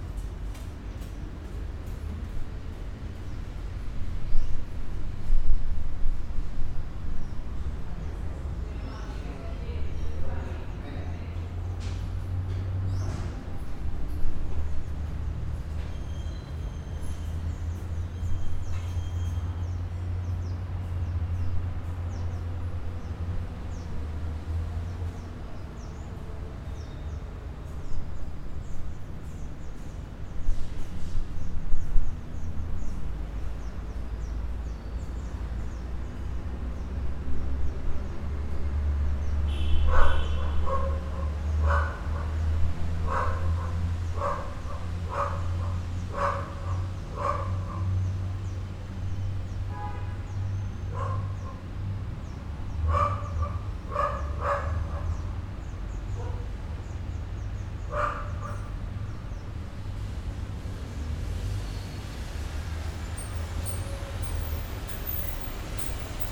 Cra., Medellín, Belén, Medellín, Antioquia, Colombia - Parqueadero
A simple vista parece un simple lugar donde se dejan estacionados los autos, más conocido como “parqueadero”. La verdad tiene un significado mucho más especial, los vehículos suelen ser los frutos del esfuerzo de sus dueños, símbolo de que continuar luchando a pesarde las adversidades tiene un gran valor, tanto es así que merecen un lugar especial donde ser custodiados con los mejores cuidados
4 September 2022, 16:40